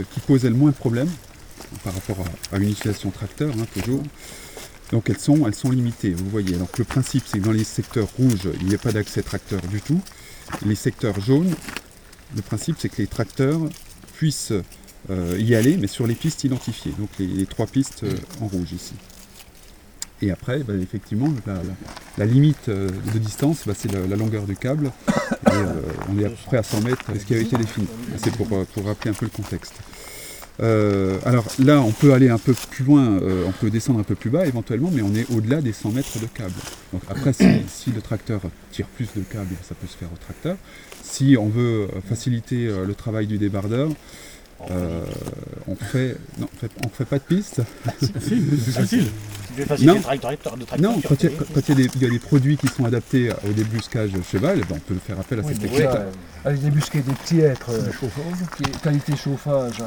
Préparation martelage PARC - Réserve Naturelle du Grand Ventron, Cornimont, France
Mr Laurent DOMERGUE, conservateur au Parc Régional des Ballons des Vosges, présente le plan du martelage des parcelles 46 & 47 de la Réserve Naturelle du Grand Ventron.
25 October 2012, 08:13